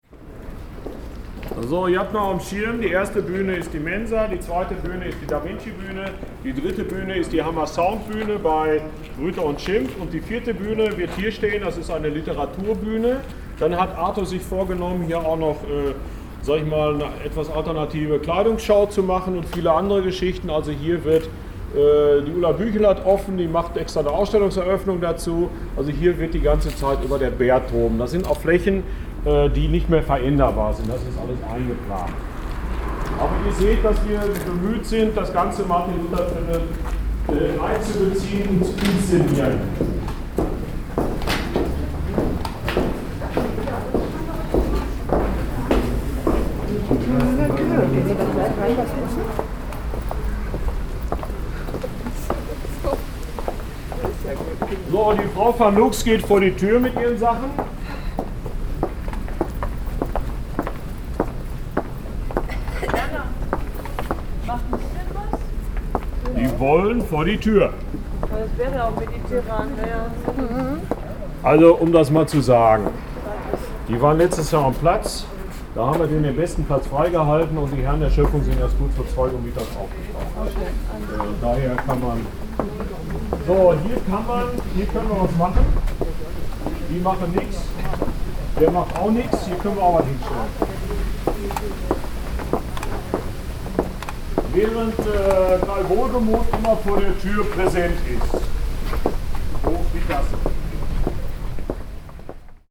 ARTO, Hamm, Germany - Rundgang durchs Lutherviertel (4)
We are joining here a guided tour through the city’s art and artists’ quartiers, the “Martin-Luther Viertel” in Hamm. Chairman Werner Reumke leads members of the area’s support associations (“Förderverein des Martin-Luther-Viertels”) through the neighborhood. Only two weeks to go till the big annual Arts-Festival “La Fete”…
Wir folgen hier einer ausserordentlichen Stadtführung durch das Martin-Luther-Viertel, das Kunst und Künstlerviertel der Stadt. Werner Reumke, Vorsitzender des Fördervereins begeht das Quatier zusammen mit Vereinsmitgliedern. Nur noch zwei Wochen bis zum grossen jährlichen Kunst- und Kulturfest “La Fete”…
recordings are archived at:
18 August 2014, 19:31